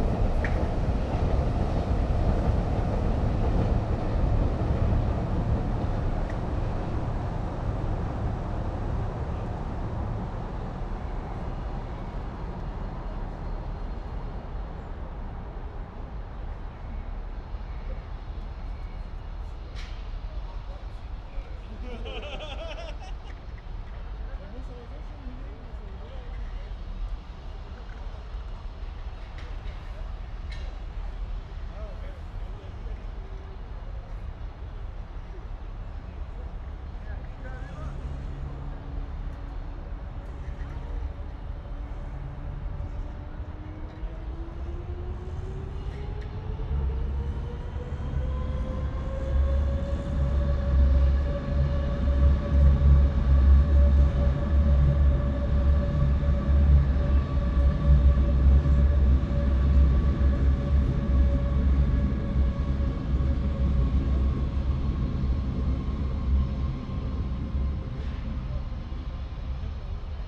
Berlin, Gleisdreieck, Westpark
Gleisdreieck Berlin, the area is under massive reorganisation, from an industrial wasteland to a recreation area.
saturday ambience, recording the air (and testing new mics)